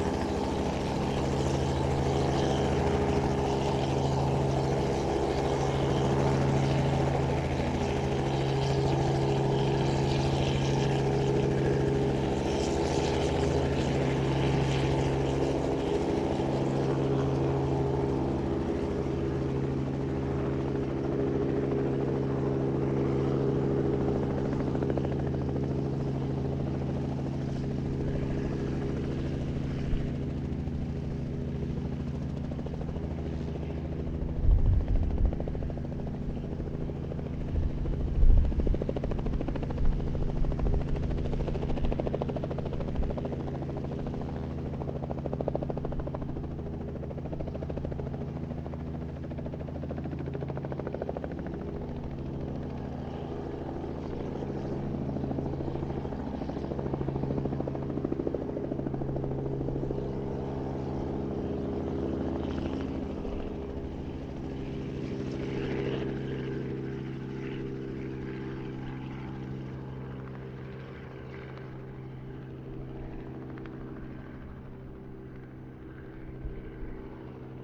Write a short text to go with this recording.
Helicopter preparing for takeoff and then taking off and hovering before flying away. Recorded at the Indianapolis Downtown Heliport on April 22, 2019 at around 9:00 pm.